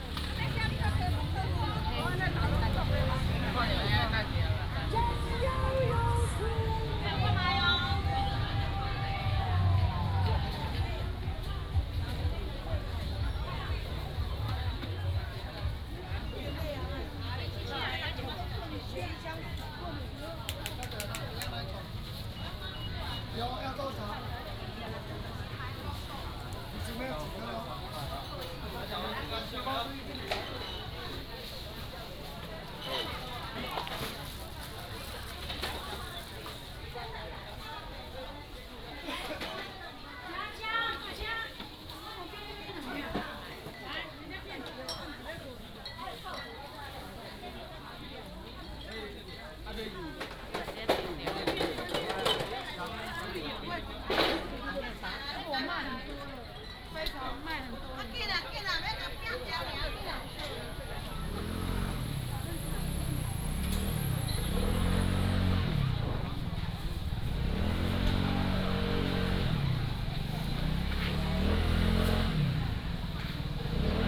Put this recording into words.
Walking in the traditional market, Indoor and outdoor markets